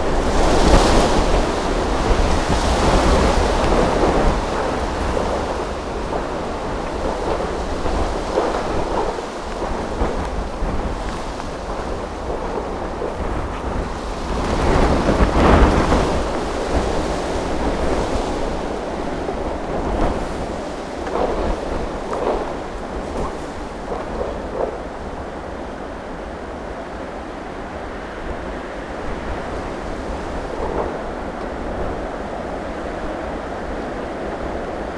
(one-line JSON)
{"title": "Court-St.-Étienne, Belgique - Wind !!", "date": "2015-01-15 07:10:00", "description": "A very powerful wind, getting around a huge auvent. The wind was so strong that it was hard to stay standing.", "latitude": "50.65", "longitude": "4.57", "altitude": "62", "timezone": "Europe/Brussels"}